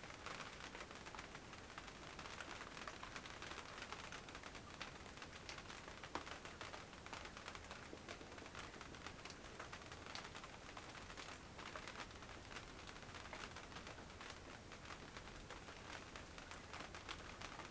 workum, het zool: marina, berth h - the city, the country & me: marina, aboard a sailing yacht
thunderstorm in the distance, rain hits the tarp and stops
the city, the country & me: june 29, 2011
29 June 2011, 00:20